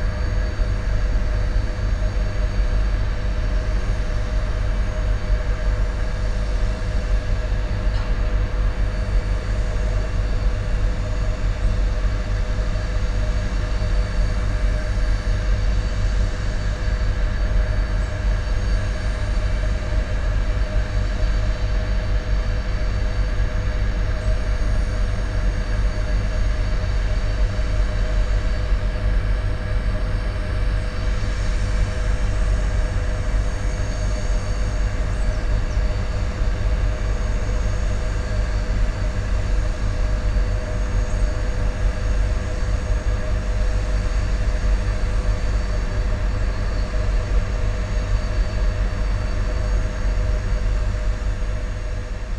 near the thermo-electrical power station, buzz and hum from the electrical devices.
(Sony PCM-D50, DPA4060)